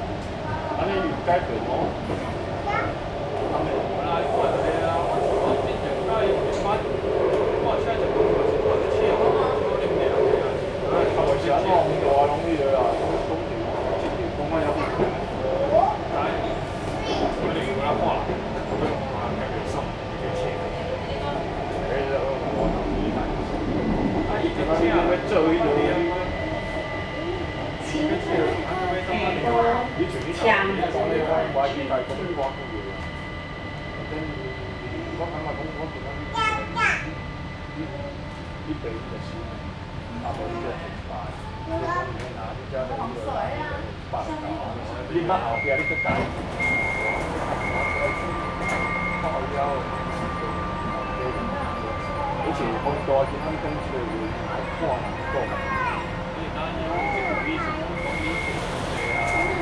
Qiaotou - Inside the MRT
from Qiaotou Station to Cingpu Station, Mother and child, Sony ECM-MS907, Sony Hi-MD MZ-RH1